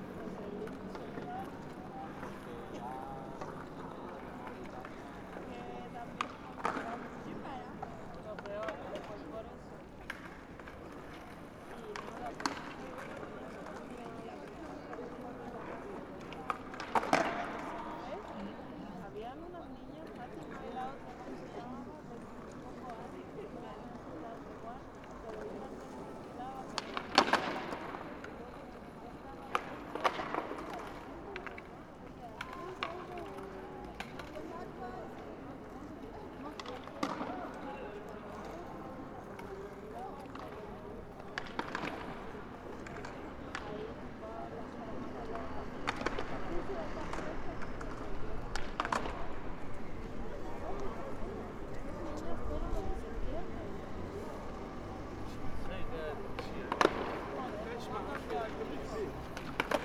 In front of the contemporary art museum, where skaters enjoy at all times of their own art.